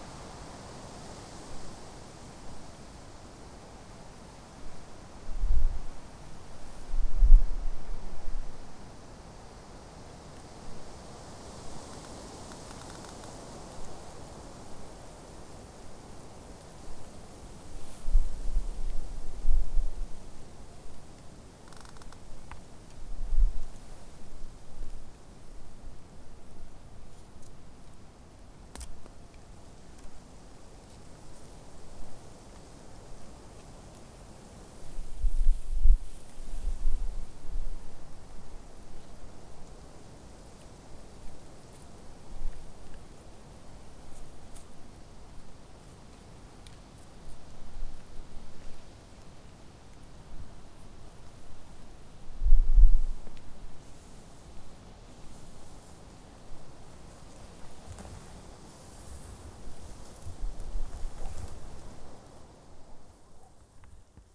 Trehörningsjö, Husån - Wind in the grass
Wind in the grass at the shore of the rapids Husån where it flows out in the lake Inre Lemesjön. Cracking sounds from the nearby birch and some bird.In 2 km distance the bells of Trehörningsjö kyrka is playing. The recording was taking place during the soundwalk on the World Listening Day, 18th july 2010 - "Ljudvandring i Trehörningsjö".
18 July 2010, 17:58